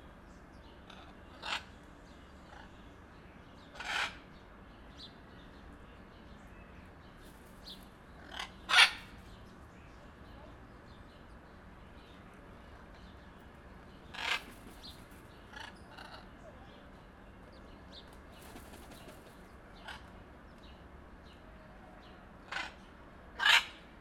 Jardin des Plantes, Paris, France - Ara blue et jaune, Jardin des Plantes
Recording of Blue-and-yellow macaws.
Ara blue et jaune (Ara ararauna)